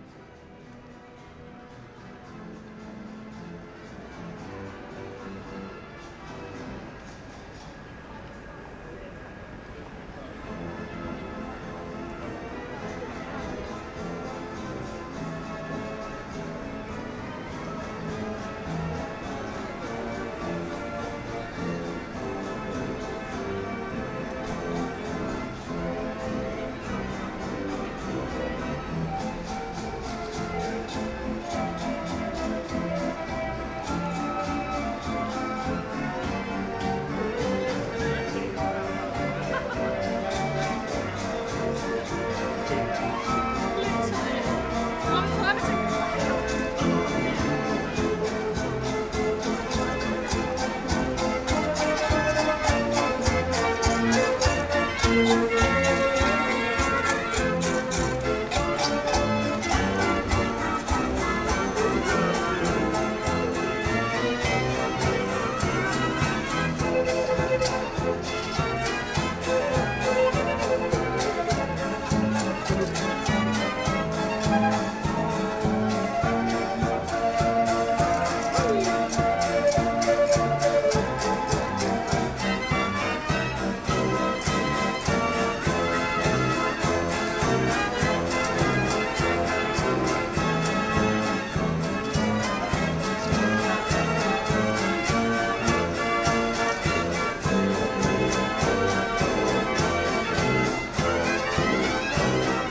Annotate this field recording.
Into a main commercial street of Amsterdam, people playing an harmonium machine. A person seeing I'm recording is trying to destroy the sound waving his thingy, out of spice. The recording is damaged but I thought it was important to talk about it. It's relative to Amsterdam overtourism.